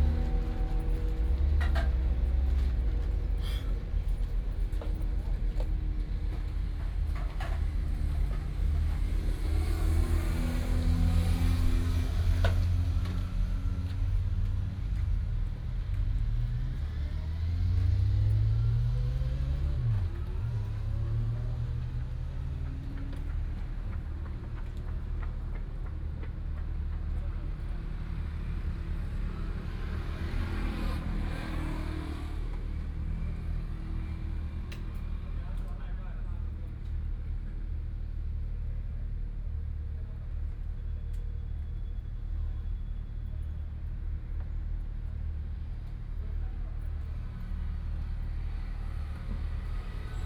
中正路69號, Nanzhuang Township - heavy locomotives
Next to the road, Holiday early morning, Very heavy locomotives on this highway, Binaural recordings, Sony PCM D100+ Soundman OKM II
Nanzhuang Township, Miaoli County, Taiwan, 24 September, 06:07